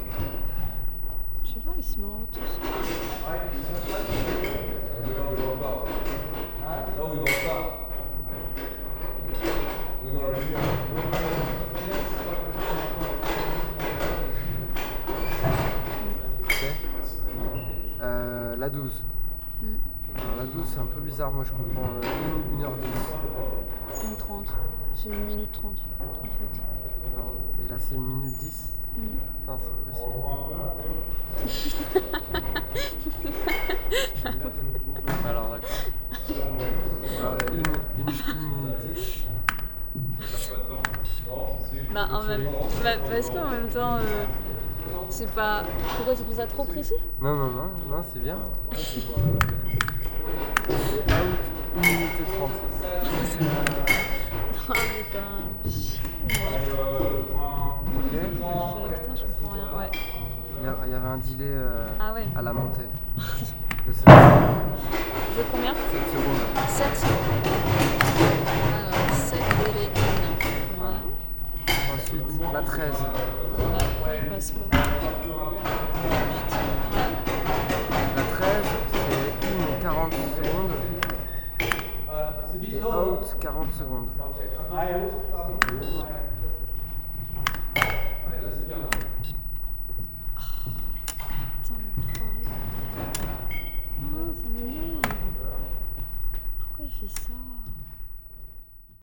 paris, la ferme du buisson, studio, setup
setup of a performance at the studio of the la ferme du buisson - the clicking and programming of the light board, conversations and noises of the genie
international city scapes - social ambiences and topographic field recordings